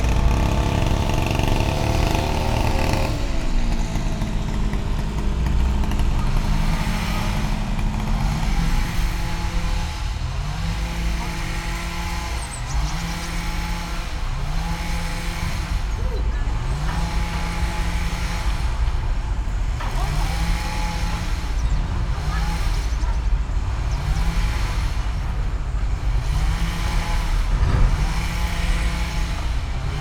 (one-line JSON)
{"title": "all the mornings of the ... - aug 6 2013 tuesday 07:06", "date": "2013-08-06 07:06:00", "latitude": "46.56", "longitude": "15.65", "altitude": "285", "timezone": "Europe/Ljubljana"}